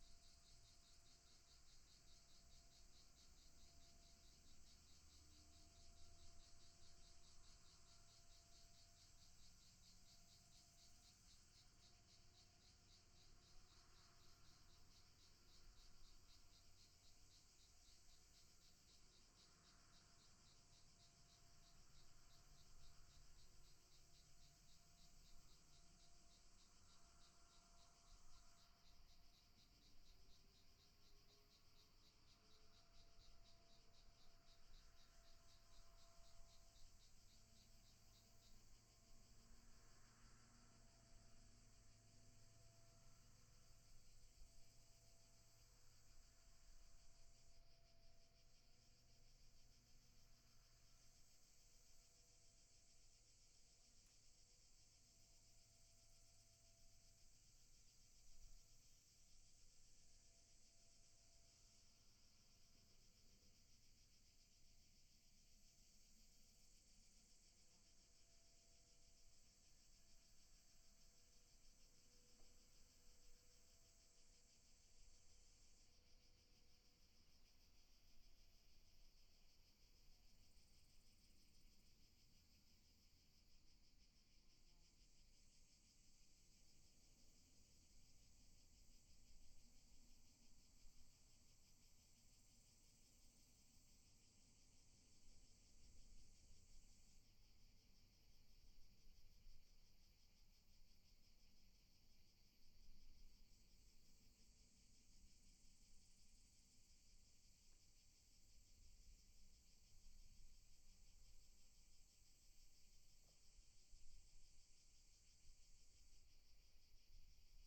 Črnotiče, Črni Kal, Slovenia - Cargo train
Cargo train going up the hill and later one locomotive going down. Recorded with Lom Usi Pro.